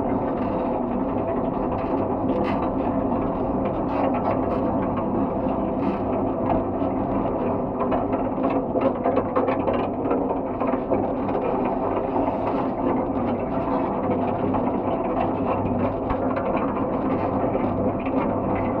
{
  "title": "MSP Terminal 1 Concourse A-Lindbergh, Saint Paul, MN, USA - Baggage Claim",
  "date": "2018-10-05 22:45:00",
  "description": "Using JrF contact mics taped to the side of the carousel. Recorded to Sound Devices 633.",
  "latitude": "44.88",
  "longitude": "-93.21",
  "altitude": "250",
  "timezone": "GMT+1"
}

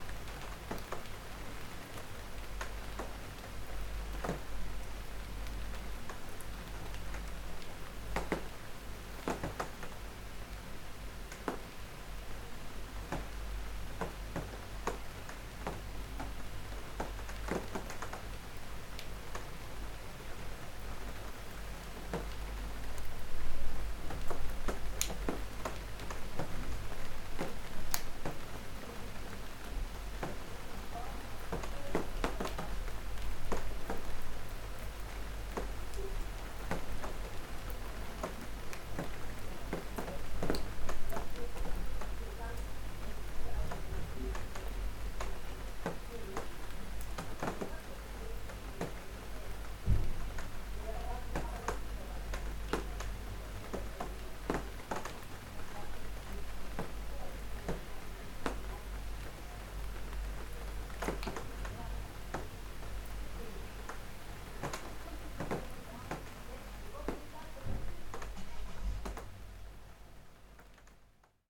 {
  "title": "Solesmeser Str., Bad Berka, Germany - Late evening rain",
  "date": "2020-08-09 22:57:00",
  "description": "Hard rain drops on window pane, soft showers and conversations in the background.\nRecording gear: Zoom F4, LOM MikroUsi Pro XLR version, Beyerdynamic DT 770 PRO headphone for field work.\nPost production monitoring headphone: Beyerdynamic DT 1990 PRO.\nRecording technique: AB.",
  "latitude": "50.90",
  "longitude": "11.29",
  "altitude": "295",
  "timezone": "Europe/Berlin"
}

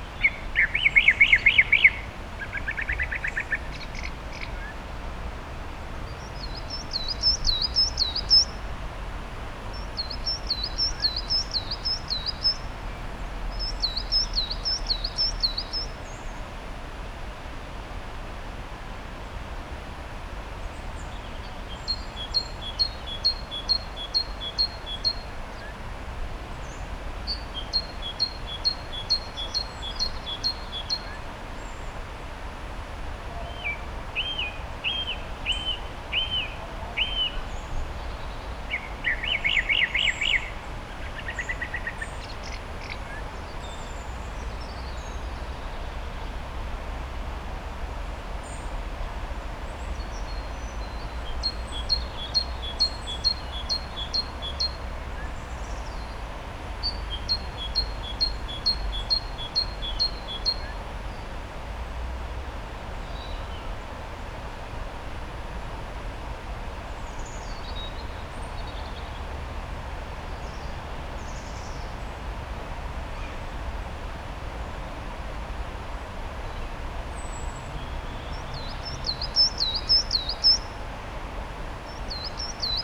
{
  "title": "The Alnwick Garden, Denwick Lane, Alnwick, UK - a recording ... of a recording ...",
  "date": "2018-11-05 12:45:00",
  "description": "a recording ... of a recording ... recording of a loop of bird song ... bird song from ... wren ... great tit ... song thrush ... coal tit ... background noise of voices ... fountains ... traffic ... and actual bird calls ... lavalier mics clipped to baseball cap ...",
  "latitude": "55.41",
  "longitude": "-1.70",
  "altitude": "59",
  "timezone": "Europe/London"
}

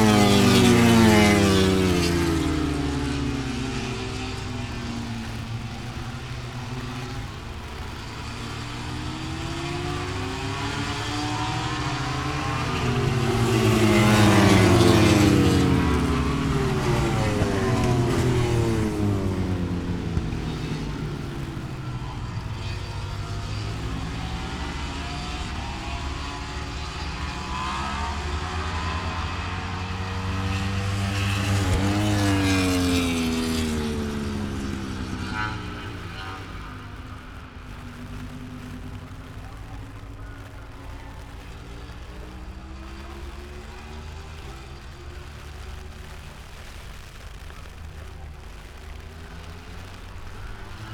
{
  "title": "Lillingstone Dayrell with Luffield Abbey, UK - British motorcycle grand prix 2016 ... moto grand prix ...",
  "date": "2016-09-03 14:35:00",
  "description": "moto grand prix qualifying two ... Vale ... Silverstone ... open lavaliers clipped to clothes pegs fastened to sandwich box ... umbrella keeping the rain off ... very wet ... associated noise ... rain on umbrella ... helicopters in the air ...",
  "latitude": "52.07",
  "longitude": "-1.02",
  "timezone": "Europe/London"
}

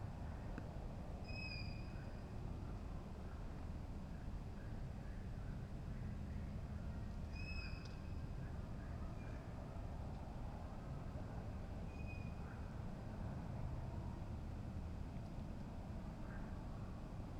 {
  "title": "Torhout, Belgium, Night on the castle grounds1:30am",
  "date": "2010-07-13 01:30:00",
  "description": "Nighttime on the castle grounds.",
  "latitude": "51.09",
  "longitude": "3.08",
  "altitude": "37",
  "timezone": "Europe/Brussels"
}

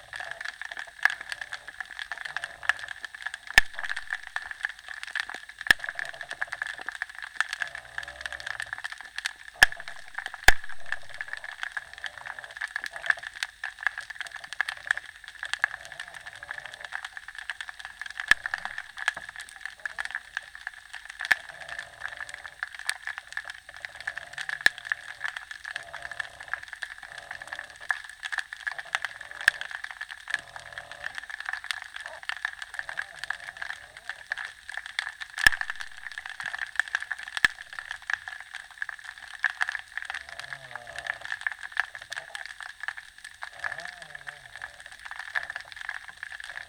{
  "title": "Cronulla, NSW, Australia - (Spring) Inside Gunnamatta Bay At Night",
  "date": "2014-09-24 19:30:00",
  "description": "Wonderful aquatic sounds in this bay. You can hear the creaking of the ferry gently moving by the wharf, and occasionally thunder of the trains on the tracks which is just behind the bay. There is a hissing sound, more so in the right hydrophone, which is not coming from the mics (I had them at equal gain and also the hydrophone hiss doesn't sound like this), I'm not sure what it is.",
  "latitude": "-34.06",
  "longitude": "151.15",
  "altitude": "4",
  "timezone": "Australia/Sydney"
}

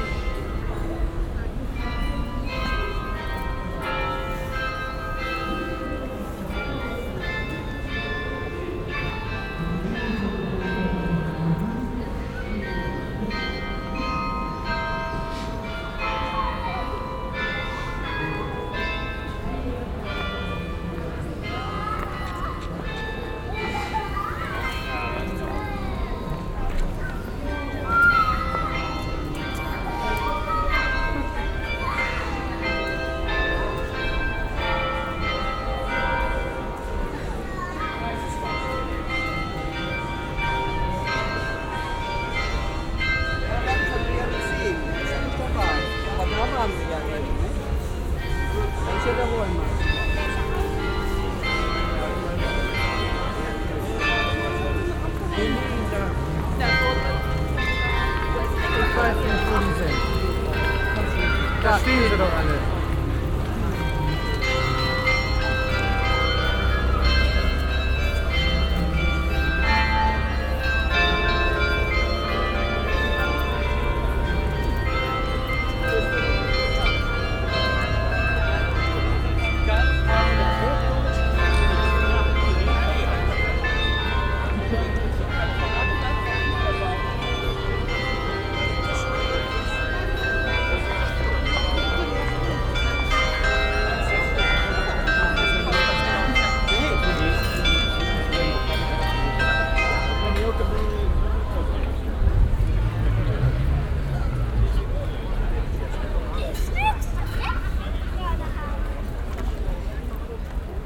{
  "title": "cologne, altstadt, alter markt, glockenspiel",
  "date": "2008-09-23 09:52:00",
  "description": "mittags, glockenspiel am alter markt, stimmen von touristischen besuchern\nsoundmap nrw: social ambiences/ listen to the people - in & outdoor nearfield recording",
  "latitude": "50.94",
  "longitude": "6.96",
  "altitude": "55",
  "timezone": "Europe/Berlin"
}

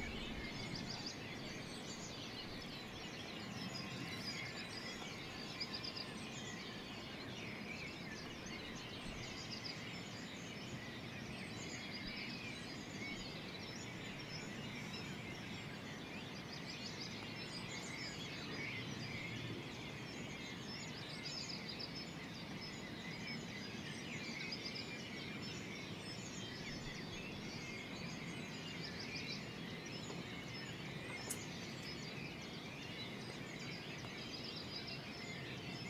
{
  "title": "Kutná Hora, Czech Republic - daybreak",
  "date": "2013-05-10 07:06:00",
  "description": "in the tower / gask",
  "latitude": "49.95",
  "longitude": "15.26",
  "altitude": "269",
  "timezone": "Europe/Prague"
}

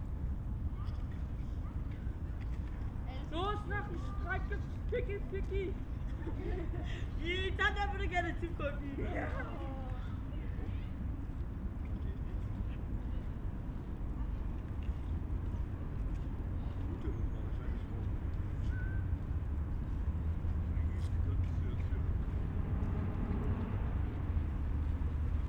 {
  "title": "Eichepark, Marzahn, Berlin, Deutschland - park ambience, kids playing, a drone flying around",
  "date": "2017-01-22 15:05:00",
  "description": "Eichepark, near river Wuhle, Sunday afternoon in winter, kids playing at the Wuhletalwächter monument, suddenly a drone appears above me, pedestrians passing-by.\n(SD702, AT BP4025)",
  "latitude": "52.56",
  "longitude": "13.58",
  "altitude": "49",
  "timezone": "Europe/Berlin"
}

